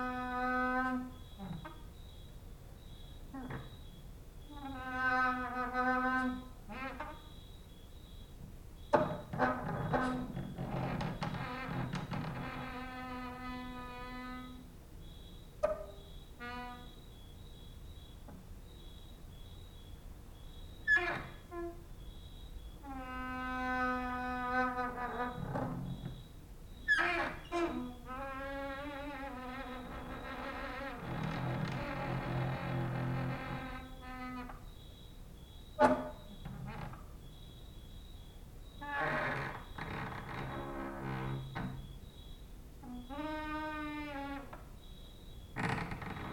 Mladinska, Maribor, Slovenia - late night creaky lullaby for cricket/15/part 1
cricket outside, exercising creaking with wooden doors inside